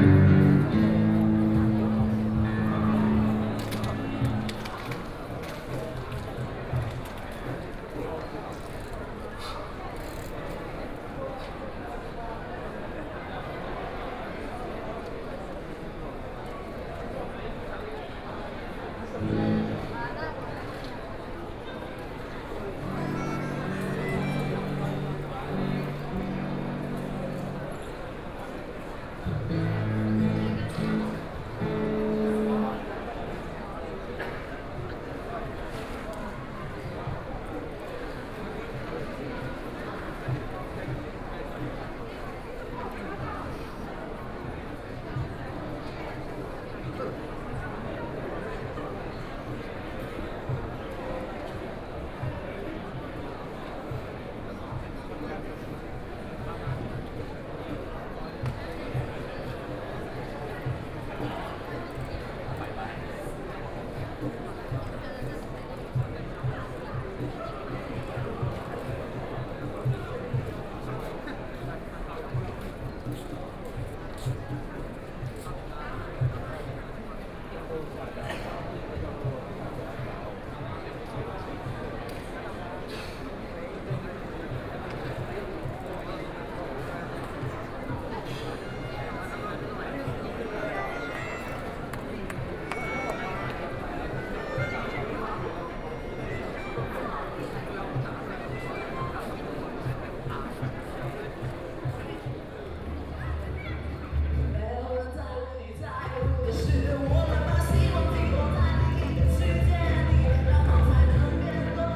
Student activism, students occupied the Legislative Yuan（Occupied Parliament）